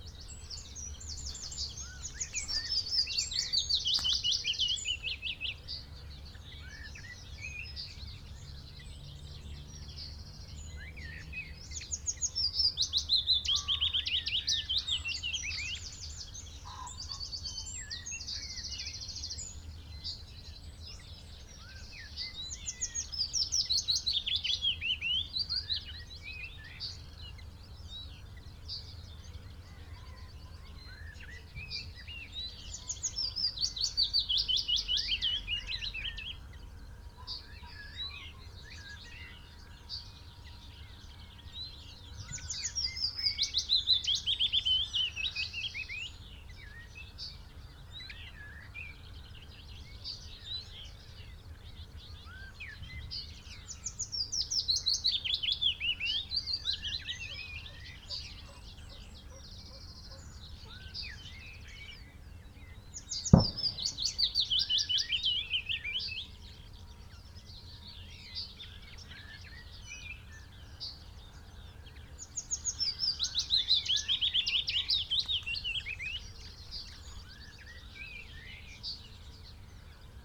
Green Ln, Malton, UK - willow warbler song ...
willow warbler song ... pre-amped mics in a SASS on tripod to Olympus LS14 ... bird calls ... song ... from ... wren ... pheasant ... red-legged partridge ... blackbird ... yellowhammer ... whitethroat ... linnet ... chaffinch ... crow ... skylark ... bird often visits other song posts before returning to this one ...
England, United Kingdom, 1 May 2020